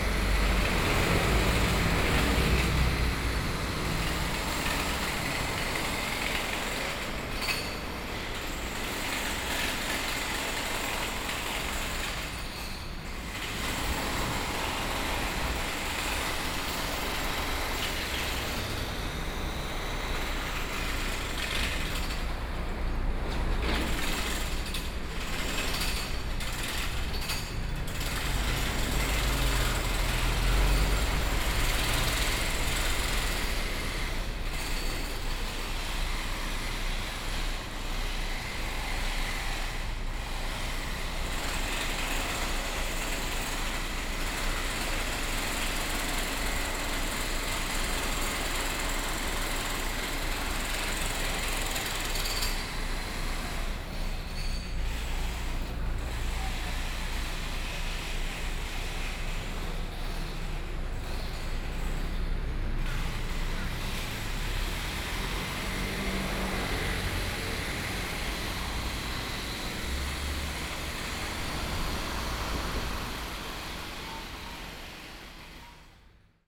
Sec., Zhongzheng Rd., Changhua City - Construction noise
Construction noise, The traffic sounds, Binaural recordings, Zoom H4n+ Soundman OKM II